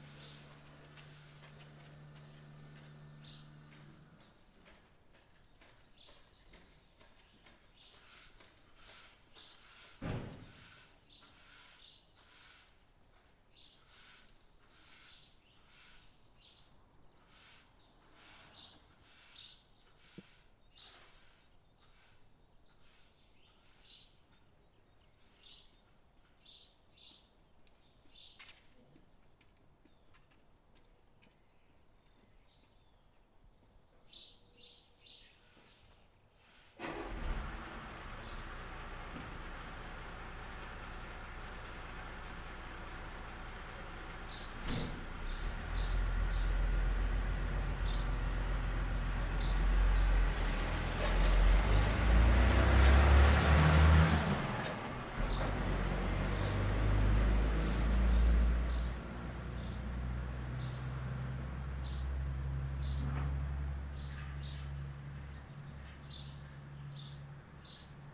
Herxheim bei Landau (Pfalz), Deutschland - Morgenstimmung in Hayna
Eine Straße wird gefegt. Ein Getränkelieferant entlädt seinen Wagen. Ein LKW fährt vorbei- Vogelgezwitscher.
Germany